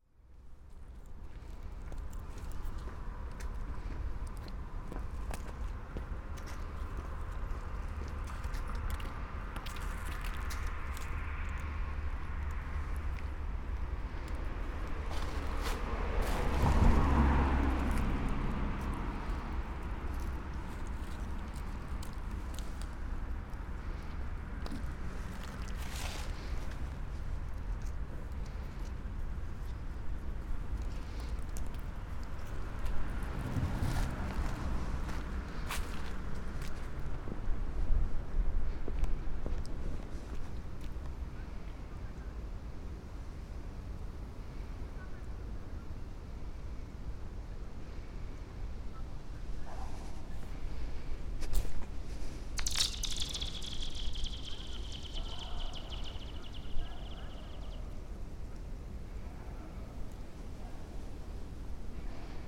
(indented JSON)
{
  "title": "City park, Maribor Slovenia - skating stones",
  "date": "2012-12-07 18:04:00",
  "description": "pond covered with thin, smooth ice, collecting and throwing small stones on to the surface, passers-by chatting, cars passing",
  "latitude": "46.57",
  "longitude": "15.65",
  "altitude": "308",
  "timezone": "Europe/Ljubljana"
}